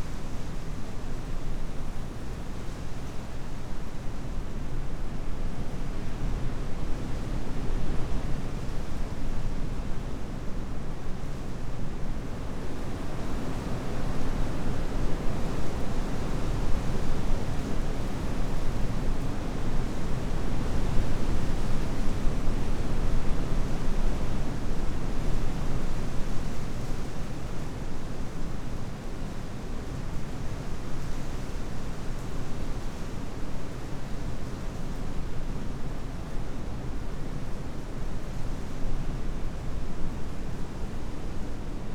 Wellenstr., Wahnbek/Rastede - moor landscape, wind in shelter
moor landscape, strong wind heard in a shelter
(Sony PCM D50, Primo EM172)
Landkreis Ammerland, Niedersachsen, Deutschland